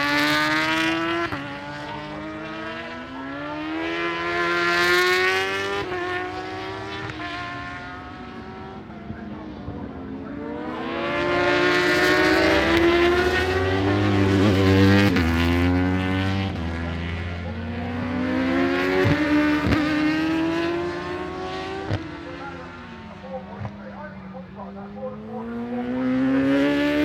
Unit 3 Within Snetterton Circuit, W Harling Rd, Norwich, United Kingdom - British Superbikes 2006 ... superbikes qualifying ...
british superbikes 2006 ... superbikes qualifying ... one point stereo mic to mini disk ...